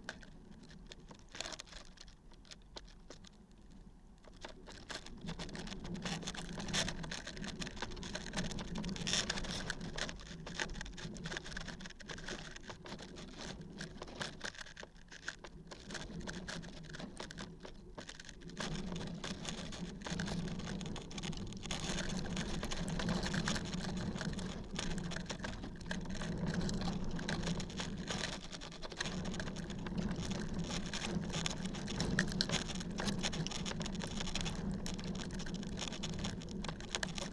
Lithuania, piece of cardboard on Maneiciai mound - piece of cardboard on Maneiciai mound
recorded with contact microphones. found object: piece of cardboard in wind